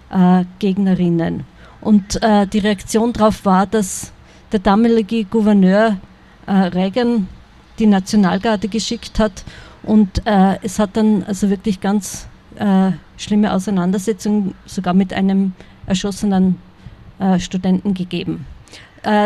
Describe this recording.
Eröffnung Premierentage 2018: Not just for Trees, Christine S. Prantauer